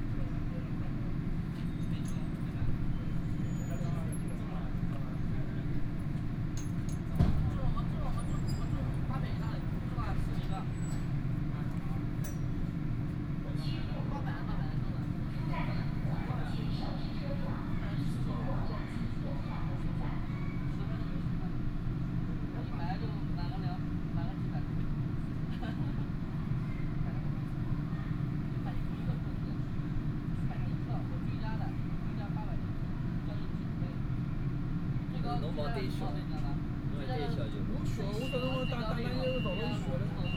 Shanghai, China - Line 8 (Shanghai Metro)
from Peope's Square station to ufu Road Road station, erhu, Binaural recording, Zoom H6+ Soundman OKM II
26 November 2013